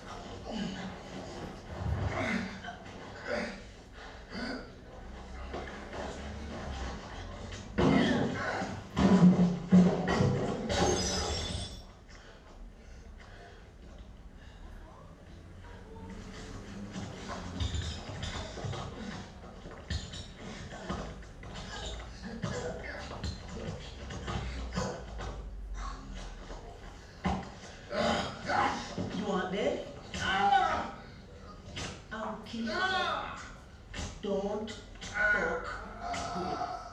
film screening of "the harder they come"
the city, the country & me: may 21, 2011